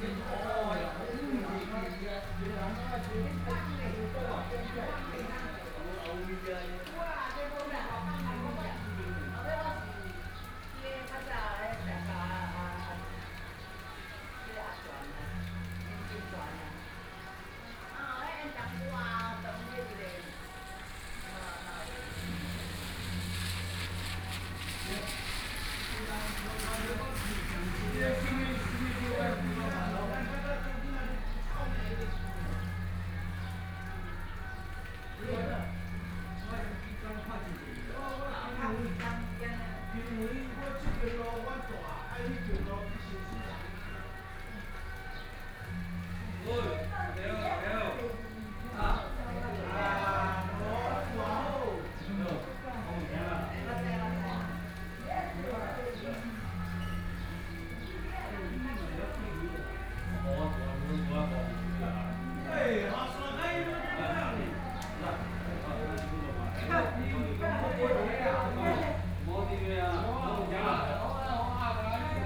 福山寺, 三星鄉天山村 - In the temple
A group of people chatting, Funeral, Rainy Day, Small village, Traffic Sound, Birdsong
Sony PCM D50+ Soundman OKM II